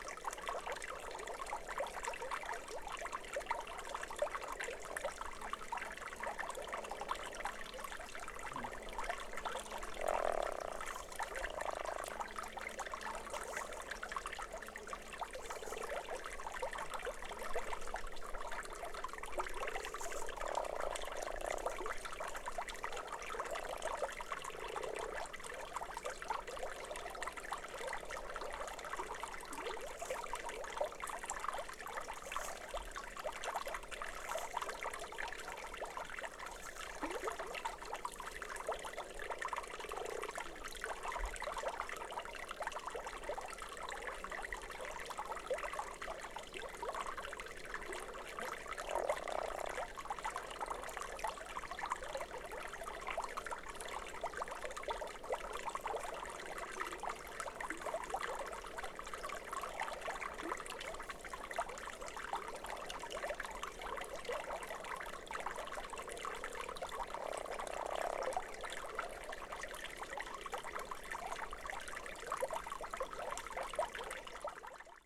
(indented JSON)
{
  "title": "Lithuania, Stabulankiai, first heard frog - first heard frog",
  "date": "2012-04-11 15:30:00",
  "description": "the very first croaking frog I've heard this spring",
  "latitude": "55.51",
  "longitude": "25.45",
  "altitude": "162",
  "timezone": "Europe/Vilnius"
}